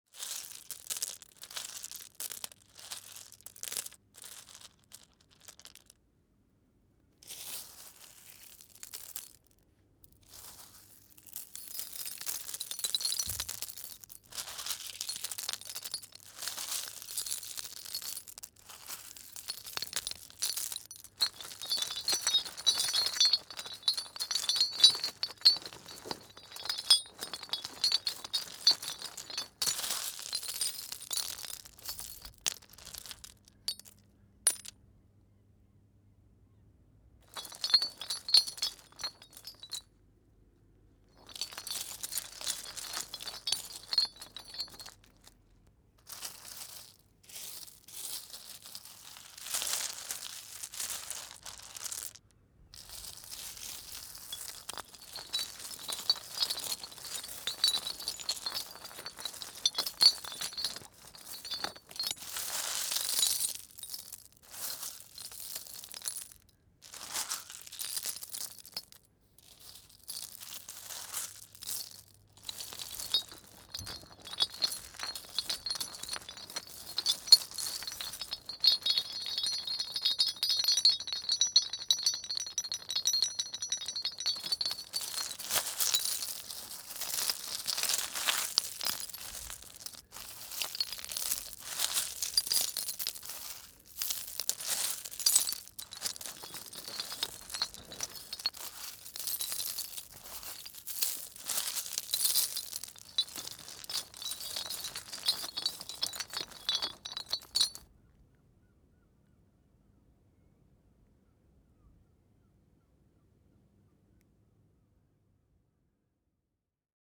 Playing around with a rusty chain and small stones, Romney Marsh, UK - Playing around with a rusty chain and small stones
The fishing industry has left its mark on Dungeness beach – derelict boats, disused rails and winches, metal debris, abandoned tangled nets are everywhere. Five links remain of this old rusty chain attached to something immovable underground, but it's sound rings and clinks when played with hands and stones.
26 July 2021, 09:13